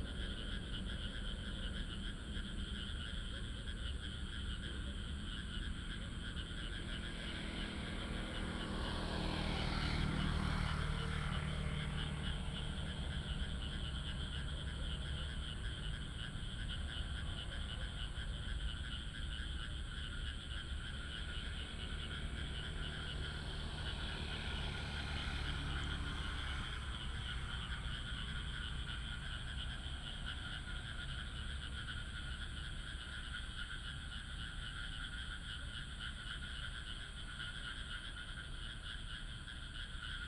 霄裡路160巷, Bade Dist., Taoyuan City - Night in the rice fields
Night in the rice fields, traffic sound, The frog sound, The plane flew through
Taoyuan City, Taiwan, August 2017